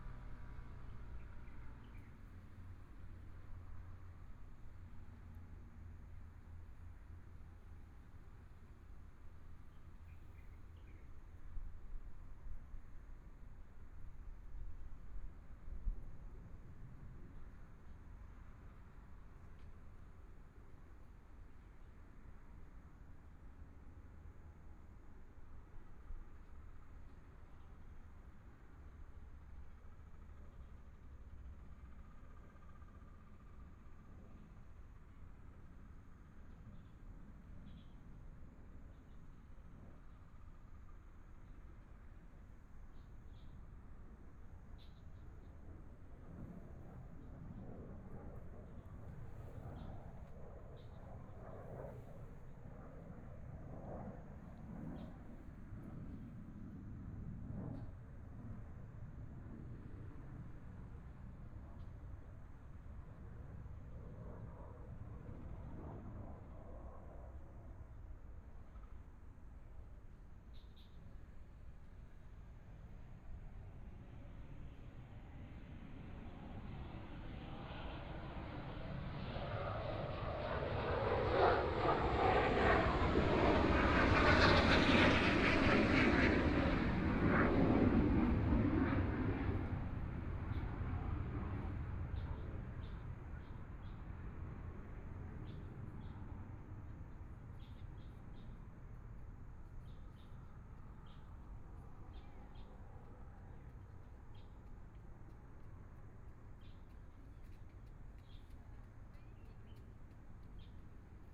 Taitung Forest Park, Taiwan - Fighter flight traveling through
Fighter flight traveling through, Binaural recordings, Zoom H4n+ Soundman OKM II ( SoundMap20140117- 7)
Taitung County, Taiwan, January 2014